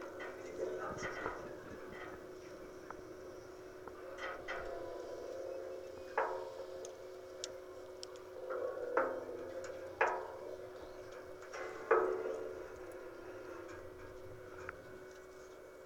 Tallinn, Baltijaam electrical pole - Tallinn, Baltijaam electrical pole (recorded w/ kessu karu)
hidden sound, contact mic recording of a tower holding electrical wires outside Nehatu Café at Tallinn's main train station
Tallinn, Estonia